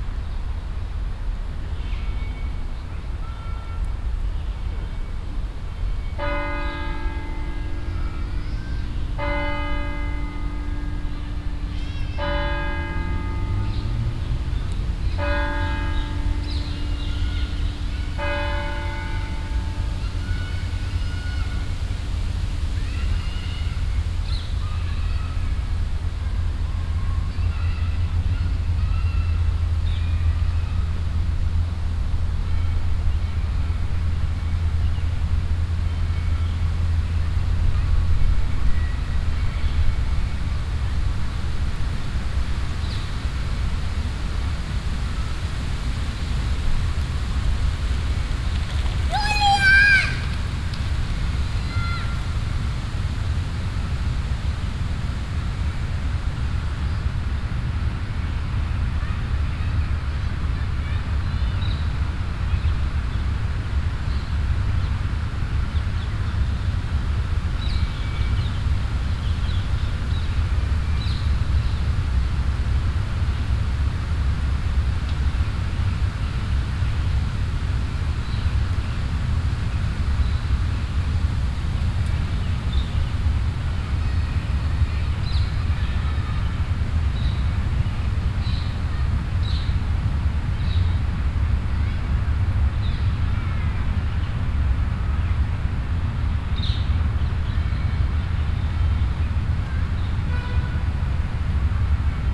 stereofeldaufnahmen im september 07 mittags
project: klang raum garten/ sound in public spaces - in & outdoor nearfield recordings